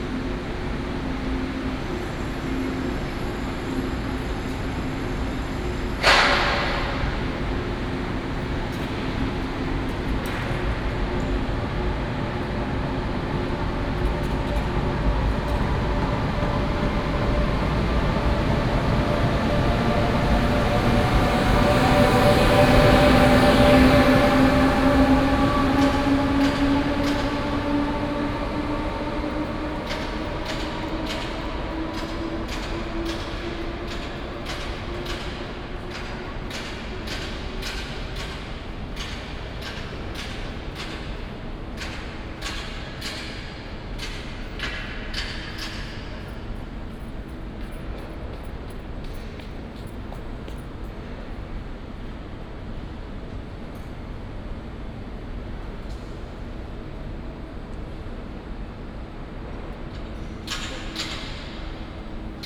臺中火車站, Taichung City - In the station platform
In the station platform, Traffic sound, Construction sound
Taichung City, Taiwan, 22 March, 15:22